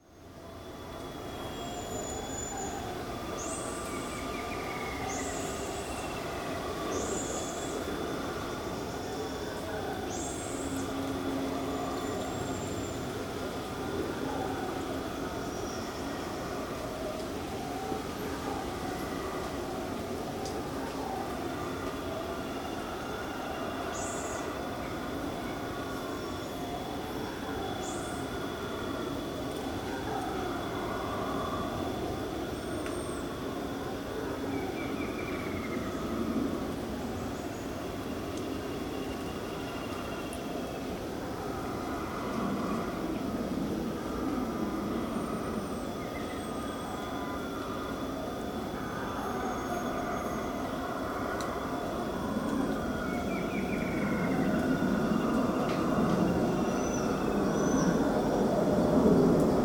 Vila Santos, São Paulo - State of São Paulo, Brazil - Howler Monkeys and Cicadas
Howler monkeys at distance and cicadas.